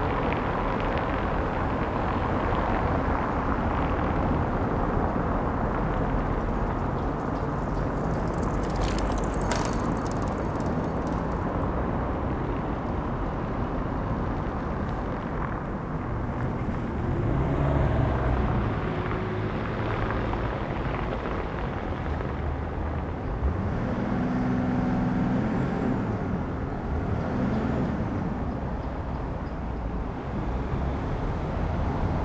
{"title": "Berlin: Vermessungspunkt Friedel- / Pflügerstraße - Klangvermessung Kreuzkölln ::: 23.01.2012 ::: 11:14", "date": "2012-01-23 11:14:00", "latitude": "52.49", "longitude": "13.43", "altitude": "40", "timezone": "Europe/Berlin"}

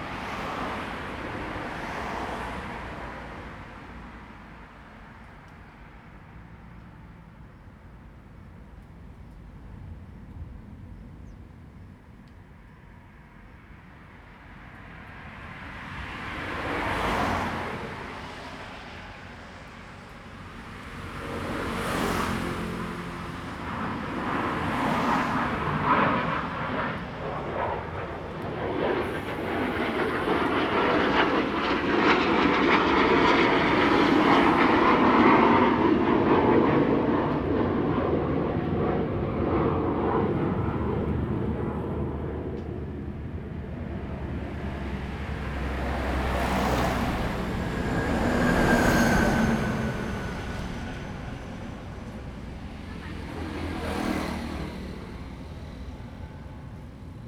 {"title": "Jilin Rd., Taitung City - on the Road", "date": "2014-09-06 08:32:00", "description": "Traffic Sound, Aircraft flying through, The weather is very hot\nZoom H2n MS +XY", "latitude": "22.78", "longitude": "121.18", "altitude": "6", "timezone": "Asia/Taipei"}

